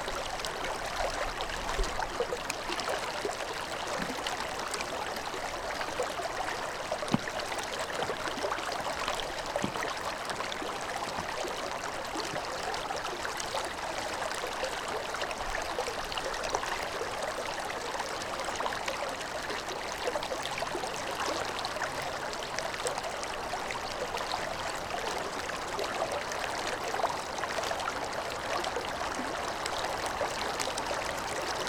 Boulder, CO, USA - slow water
Ice fishing on the south boulder creek
14 February 2013